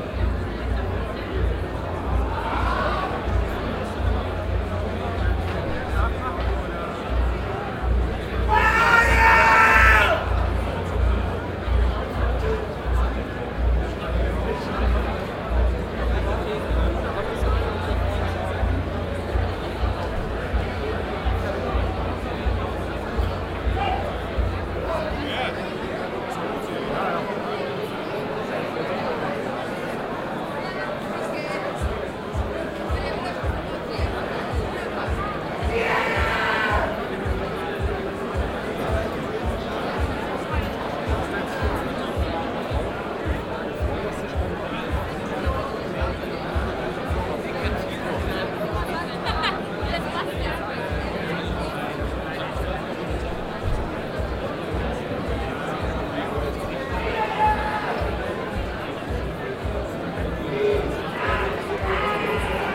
cologne, gloria, audience before concert
inside the club hall - audience before a concert
soundmap nrw - social ambiences and topographic foeld recordings
gloria, apostelnstraße